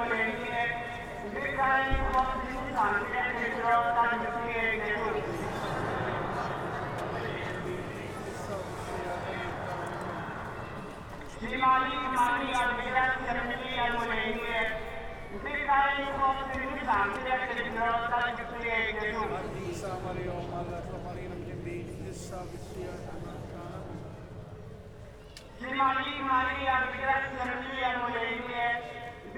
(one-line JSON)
{"title": "Misraħ ir-Repubblika, Żejtun, Malta - prayer and procession in the streets", "date": "2017-04-07 18:20:00", "description": "The devotion towards the Passion of Jesus Christ has strong roots in Malta. When the Knights of St. John came to the Maltese Islands in 1530, they brought with them relics of the Passion, which helped to foster this devotion among the people.\nStreet procession, prayer amplified from inside the church, footsteps\n(SD702, DPA4060)", "latitude": "35.86", "longitude": "14.53", "altitude": "56", "timezone": "Europe/Malta"}